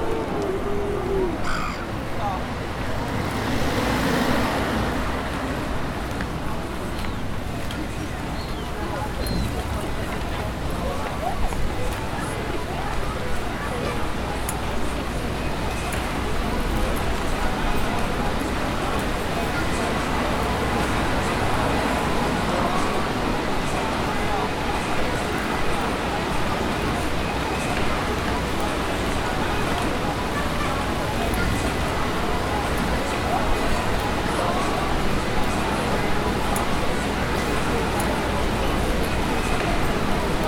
City of Sunderland - The City of Sunderland
A short, general day-in-the-life summary of a bustling, excited, active afternoon in and around the City of Sunderland.